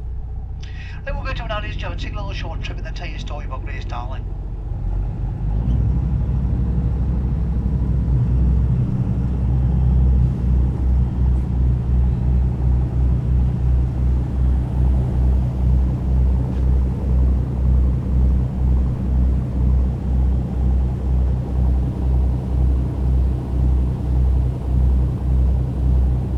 Grey seal cruise ... Longstone Island ... commentary ... background noise ... lavalier mics clipped to baseball cap ...
UK, 6 November 2018